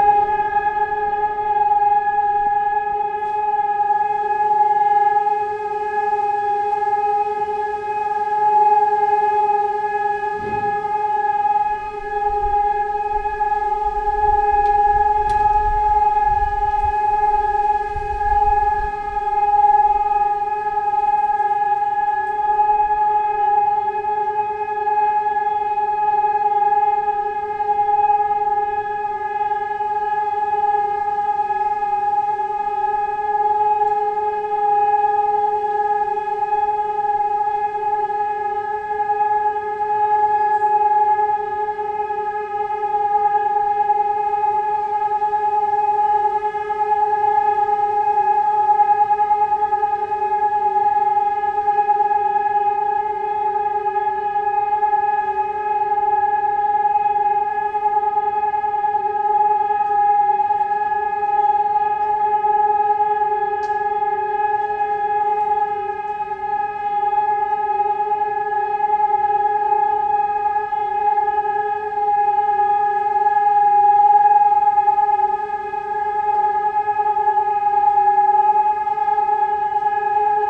communication space skolska 28, air raid
air raid sounds every first wednesday in a month